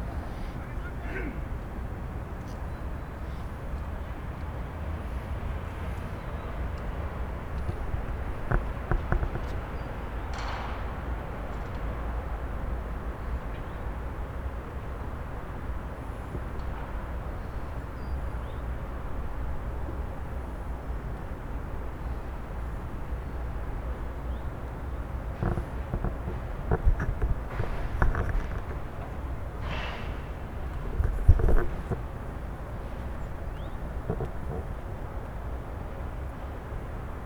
Hafen, Braunschweig, Deutschland - 2 Minuten Hafen/Kanal
Braunschweiger Hafen, Mittellandkanal, Projekt: TiG - Theater im Glashaus: "über Land und Mehr - Berichte von einer Expedition zu den Grenzen des Bekannten". TiG - Theater im Glashaus macht sich 2013 auf zu Expeditionen in die Stadt, um das Fremde im Bekannten und das Bekannte im Fremden zu entdecken. TiG, seit 2001 Theater der Lebenshilfe Braunschweig, ist eine Gruppe von Künstlerinnen und Künstlern mit unterschiedlichen Kompetenzen, die professionell erarbeitete Theaterstücke, Performances, Musik und Videofilme entwickelt.
Niedersachsen, Deutschland, 15 April, 16:00